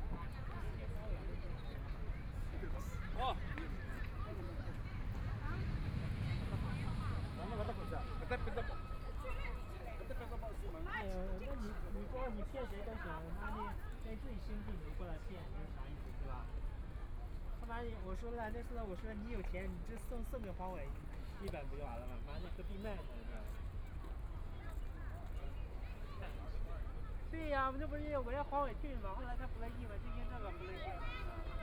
walking in the park, Binaural recording, Zoom H6+ Soundman OKM II
Shanghai, China, 23 November, 16:45